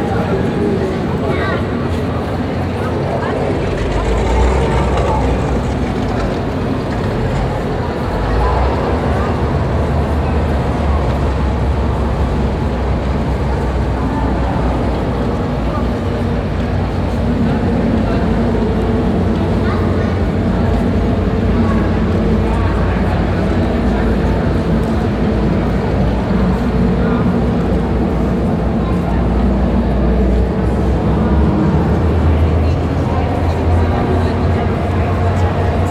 Inside the documenta hall during the documenta 13. The sound of a motor exhibition by Thomas Bayrle. In the background the sound of the exhibition visitors.
soundmap d - social ambiences, art places and topographic field recordings